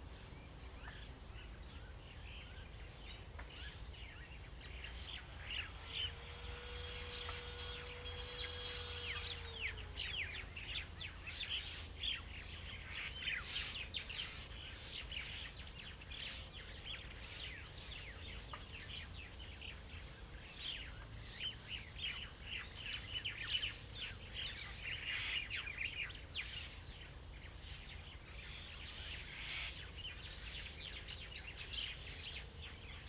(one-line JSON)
{"title": "湖埔路, Lieyu Township - Birds singing", "date": "2014-11-04 08:23:00", "description": "Birds singing, Traffic Sound, Dogs barking\nZoom H2n MS+XY", "latitude": "24.45", "longitude": "118.25", "altitude": "30", "timezone": "Asia/Shanghai"}